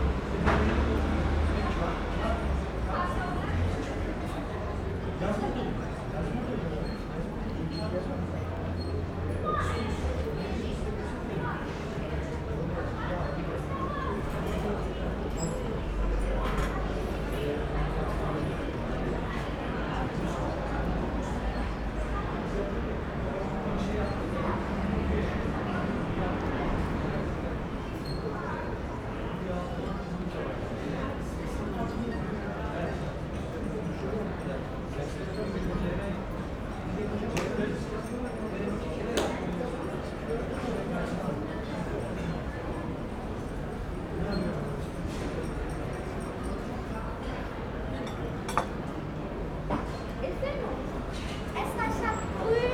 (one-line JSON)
{"title": "Berlin, Kotti, Bodegga di Gelato - Bodegga di Gelato, friday night coffee break", "date": "2009-05-29 21:50:00", "description": "29.05.2009 short coffee break in front of the bodegga, noise of a balcony party on the 1st floor in background.", "latitude": "52.50", "longitude": "13.42", "altitude": "40", "timezone": "Europe/Berlin"}